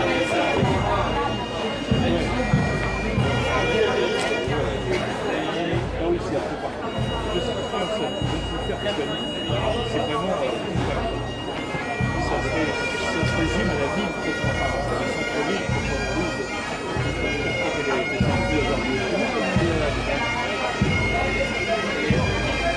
Pl. Polig Montjarret, Lorient, França - Ambiance Festival Interceltique and beer
ENG: Ambience of a bar during the Festival Intercletique in Lorient (Britanny-France). While having a beer, a "bagad" passes by. Recorder: Samsung Galaxy S6 and Field Recorder 6.9.
FR: Ambiance dans un bar en plein air pendant le Festival Interceltique. Pendant la bière, un "bagad" passe par la place.
France métropolitaine, France, 13 August